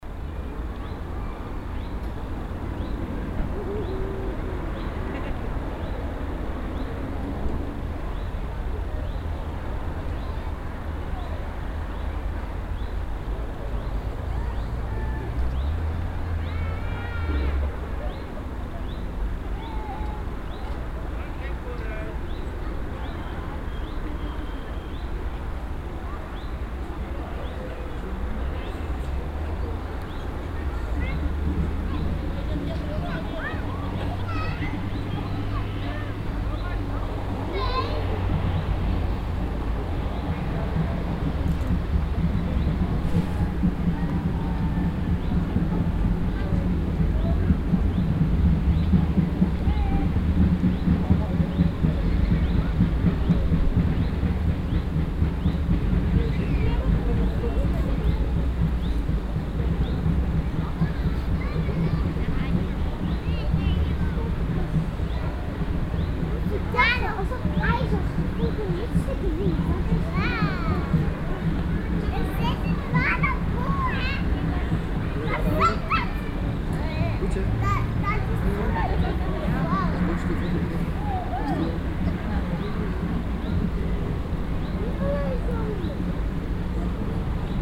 vianden, mountain forest, chairlift
On a path in the forest, watching the downhill city and river. A chairlift with talking passengers floating constantly above my head. Finally I am joined by a dutch tourist family.
Vianden, Berg, Wald, Sessellift
Auf einem Weg im Wald Blick auf die Stadt und den Fluss im Tal. Ein Sessellift mit sich unterhaltenden Passagieren schwebt ständig über meinem Kopf. Am Ende schließt sich mir eine niederländische Touristenfamilie an.
Vianden, forêt de montagne, télésiège
Sur une promenade dans la forêt. Vue de la ville et la vallée du fleuve. Des télésièges avec passagers parlants au-dessus de ma tête. A la fin, une famille de touristes hollandais se joint à moi.
Project - Klangraum Our - topographic field recordings, sound objects and social ambiences